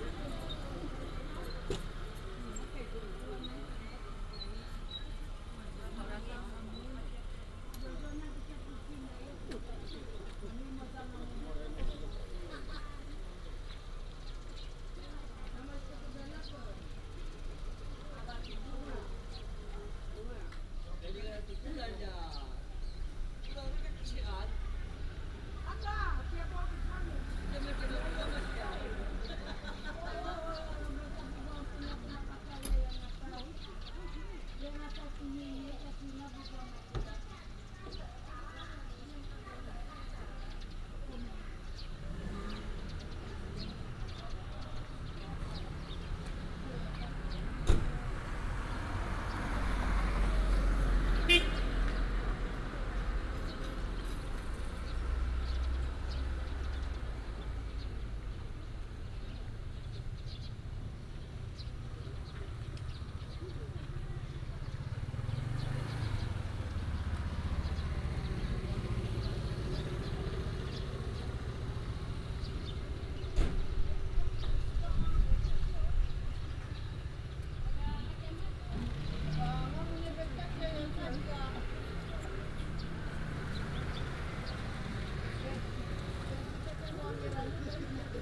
Daniao, Dawu Township, 大武鄉大鳥 - Tribal main road
Beside the road, Traffic sound, Tribal main road, Residents gather to prepare for a ride, birds sound
Binaural recordings, Sony PCM D100+ Soundman OKM II